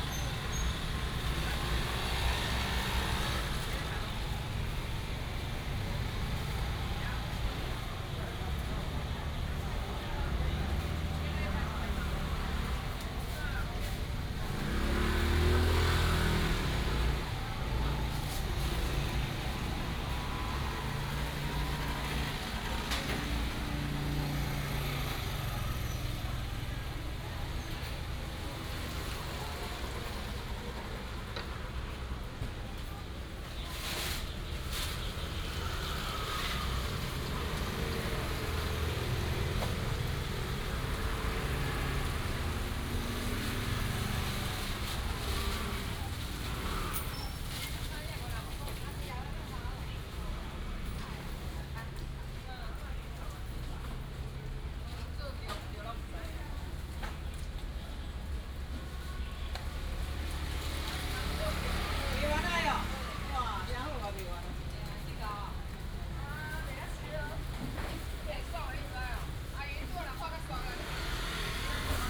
An old woman is selling vegetables, Vegetable vendors, Rainy day, Traffic sound, Binaural recordings, Sony PCM D100+ Soundman OKM II
Luodong Township, Yilan County, Taiwan